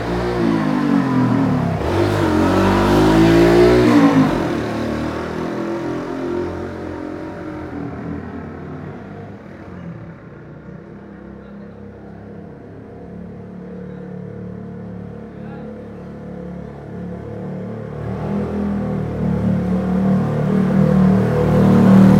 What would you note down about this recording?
Am Abend in einer Seitengasse. Die Insel ist Autofrei. Mai 2003